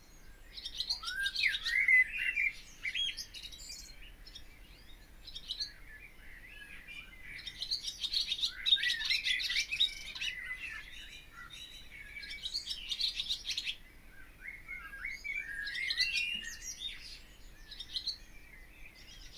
On the guttering ... a swallow ... bird singing on the guttering above the back door ... nest is some 10m away ... recorded using Olympus LS 14 integral mics ... bird song from blackbird and song thrush ... some background noise ...